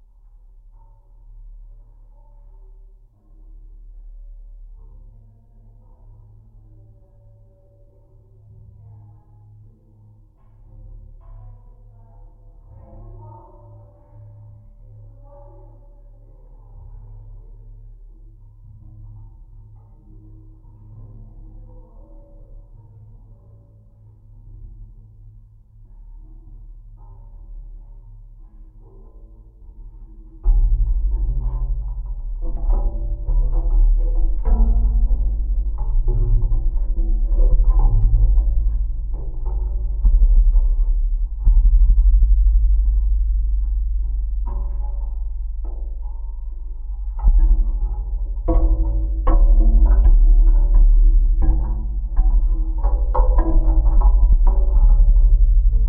Ventspils, Latvia, artillery correction tower
The stairs in arlillery correction tower. Recorded with geophone.
Kurzeme, Latvija